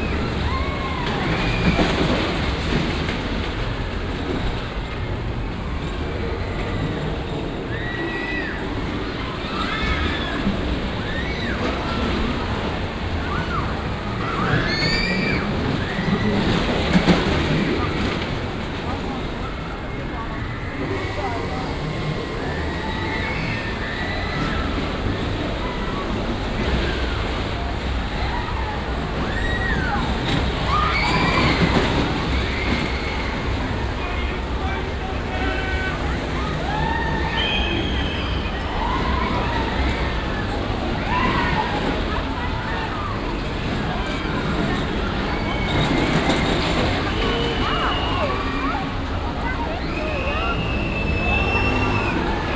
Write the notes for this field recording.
christmas market, violent fairground rides